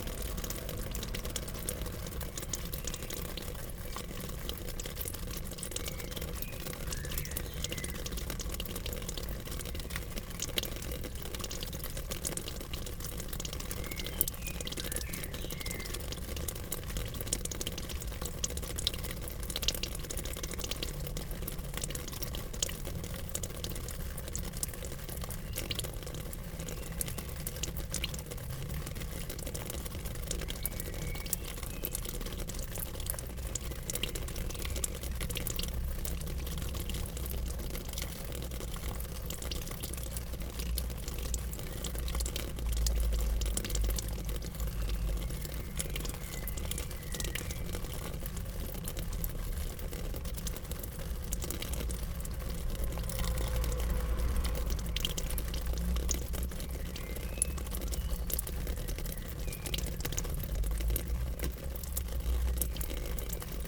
cologne, mainzerstrasse 71 HH, wasser im hof
soundmap cologne/ nrw
hinterhof atmosphäre mittags, wasser läuft in regentonne
project: social ambiences/ listen to the people - in & outdoor nearfield recordings